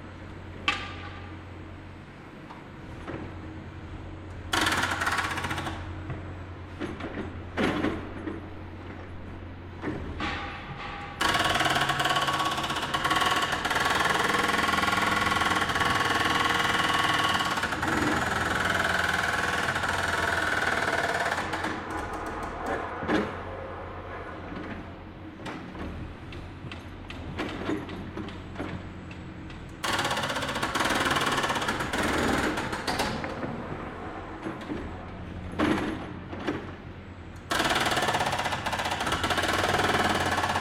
Moscow, Granatnyy side-street - Building